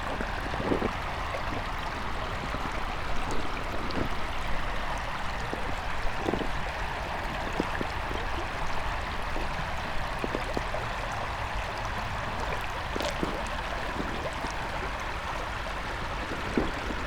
still poem, Drava, Slovenia - river, gravel, slow steps
Starše, Slovenia, 15 November 2015